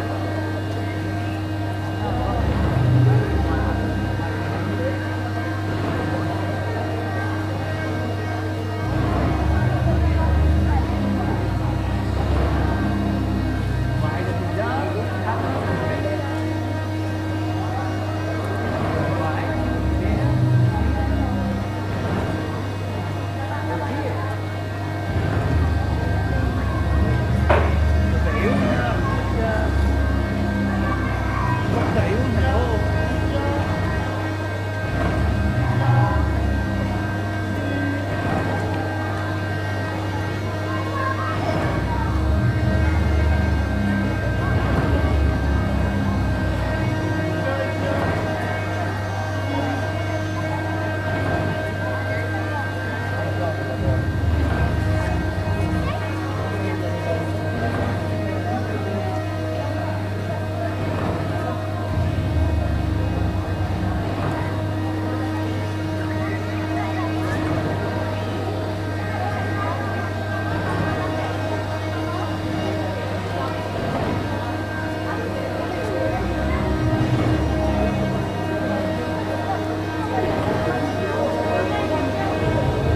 avenue de lAérodrome de, Toulouse, France - The Minotaur
The Minotaur
A moving architectural structure, the Minotaur is able to carry up to 50 people on its back for daily excursions in the Montaudran district. He gallops and rears, goes to sleep and handles objects. He incarnates life and provides a different perspective on places through his movements.
Captation : Zoom H4n
30 May 2021, 14:30